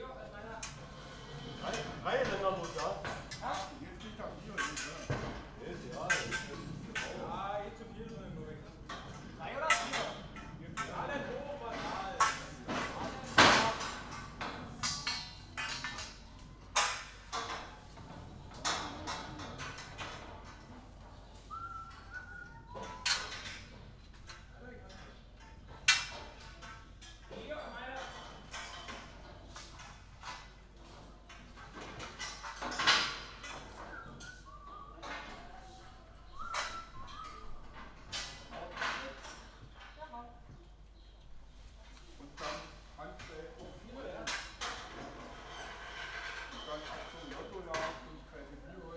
scaffolders at work
(Sony PCM D50)
7 August 2014, 15:35